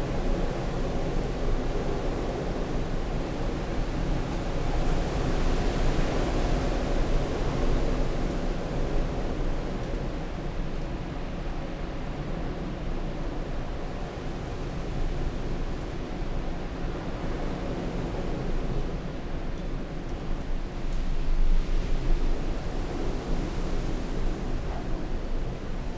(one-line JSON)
{"title": "Unnamed Road, Malton, UK - inside church porch ... outside storm erik ...", "date": "2019-02-09 07:20:00", "description": "inside church porch ... outside .. on the outskirts of storm erik ... open lavaliers on T bar on tripod ... background noise ... the mating call of the reversing tractor ...", "latitude": "54.12", "longitude": "-0.54", "altitude": "84", "timezone": "Europe/London"}